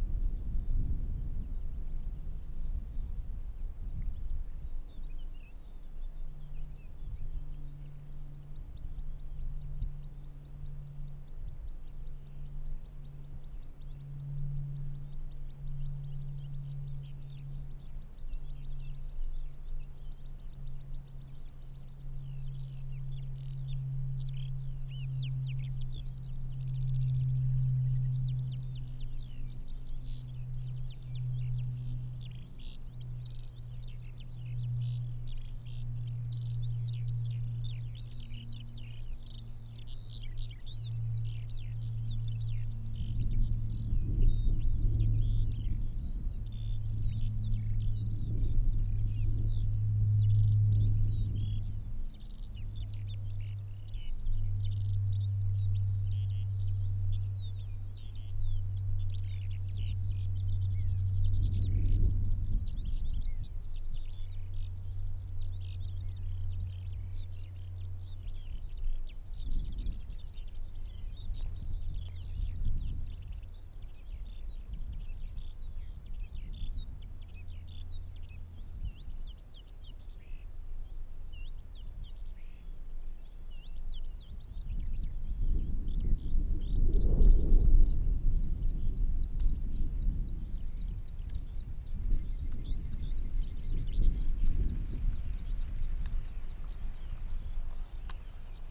Soundfield mic (blumlein decode) Birds, wind, military aeroplane